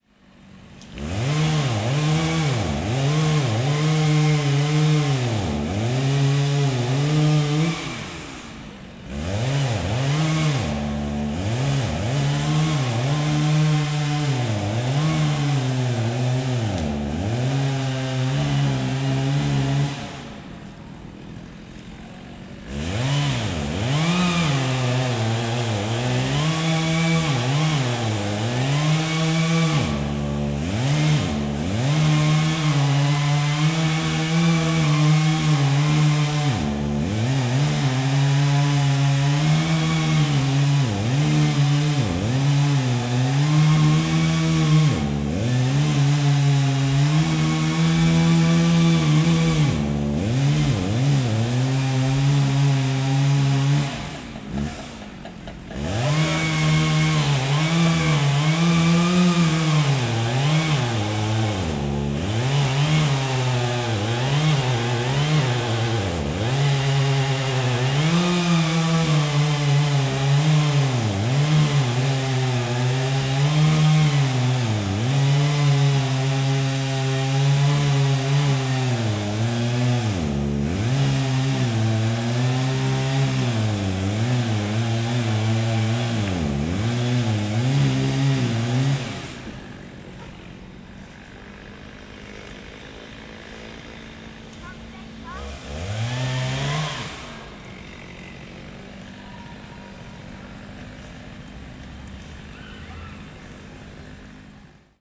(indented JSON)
{
  "title": "berlin, gropiushaus - wood cutting",
  "date": "2011-08-03 17:45:00",
  "description": "worker cutting wood in the inner yard of gropiushaus, berlin",
  "latitude": "52.43",
  "longitude": "13.47",
  "altitude": "47",
  "timezone": "Europe/Berlin"
}